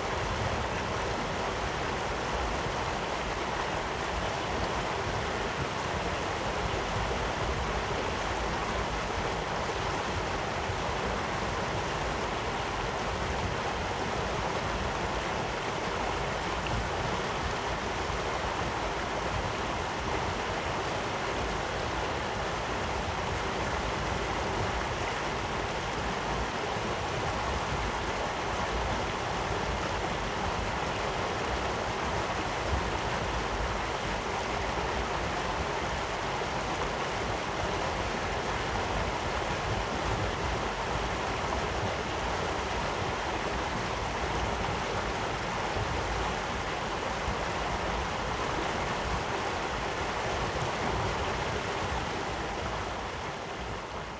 新興村, Chihshang Township - Irrigation waterway
Irrigation waterway, The sound of water, Streams waterway, Very hot weather
Zoom H2n MS+ XY